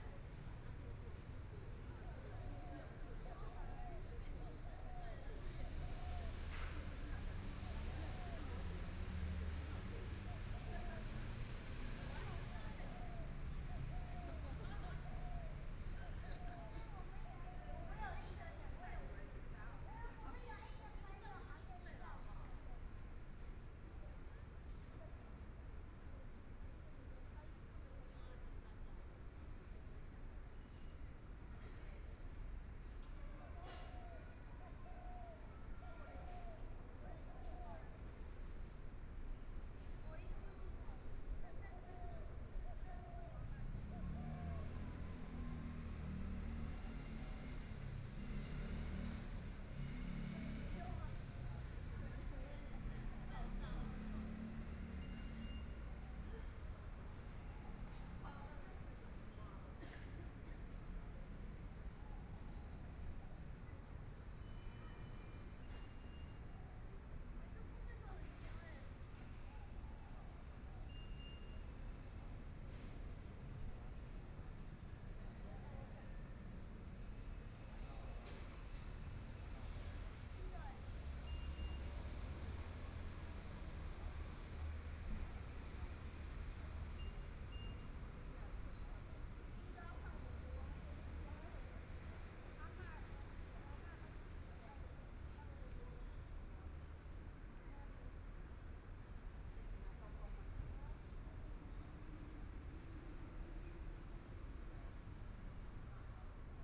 Sec., Zhongshan N. Rd., Zhongshan Dist. - in the Park
in the Park, Binaural recordings, Zoom H4n+ Soundman OKM II
6 February, ~13:00